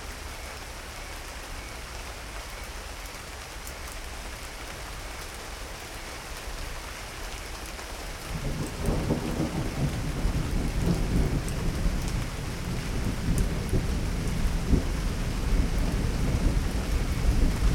Tangara, Rio Acima, Brazil - Rain and thunder during the night, in Brazil
During a summer night in the countryside of Brazil, in the state of Minas Gerais... somme light rain and big thunders.
Recorded by an ORTF setup Schoeps CCM4x2
On a Sound Devices 633
Recorded on 24th of December of 2018
GPS: -20,11125573432824 / -43,7287439666502
Sound Ref: BR-181224T01